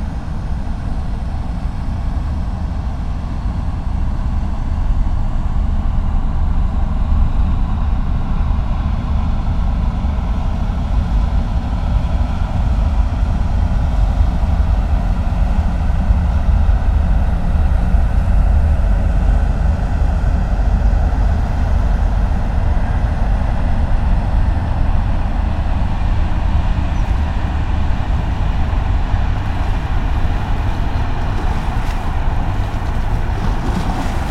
Saint-Pierre-du-Vauvray, France - Boat
A boat is passing by on the Seine river. It's the Excellence Royal, a tourist boat coming from Basel.